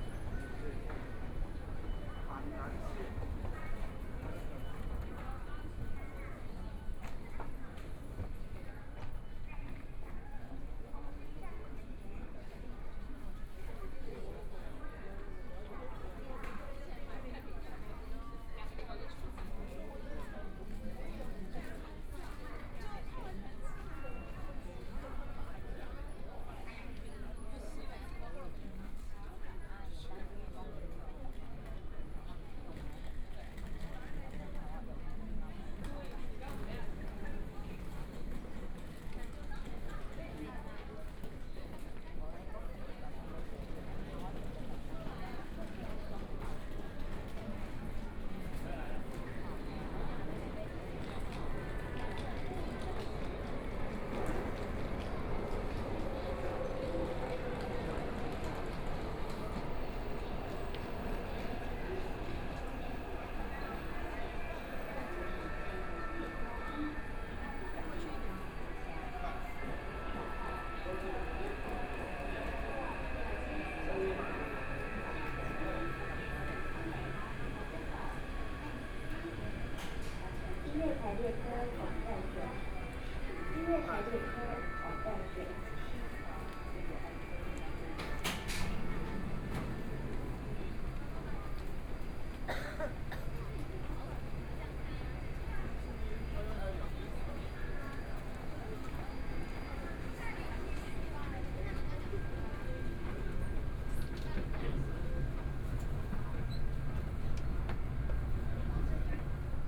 Taipei Main Station, Taiwan - soundwalk
From the train station platform, Direction to MRT station, walking in the Station
Please turn up the volume
Binaural recordings, Zoom H4n+ Soundman OKM II
24 February 2014, ~21:00